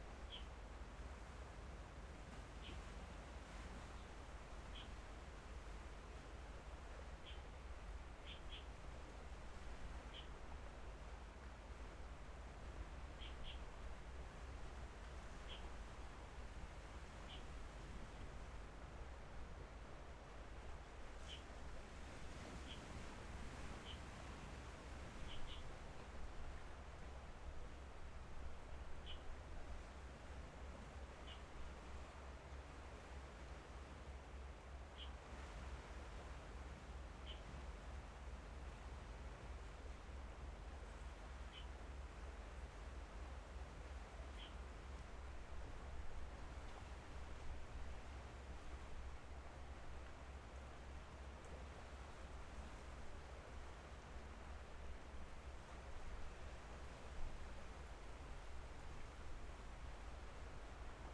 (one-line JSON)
{"title": "天福村, Hsiao Liouciou Island - Birds and waves", "date": "2014-11-01 13:22:00", "description": "On the coast, Birds singing, Sound of the waves\nZoom H6 XY", "latitude": "22.33", "longitude": "120.36", "altitude": "23", "timezone": "Asia/Taipei"}